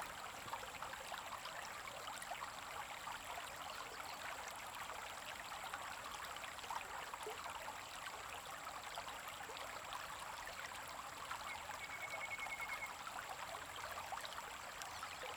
乾溪, 埔里鎮成功里 - Flow sound
Stream, River scarce flow
Zoom H2n MS+XY